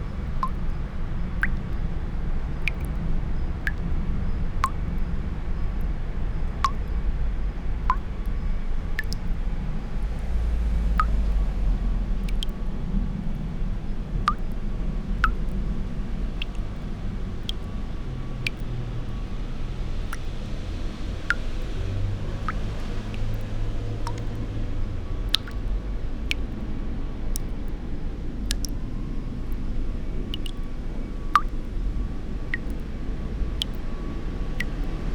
chōzubachi, garden of tomoe, kyoto - drops of water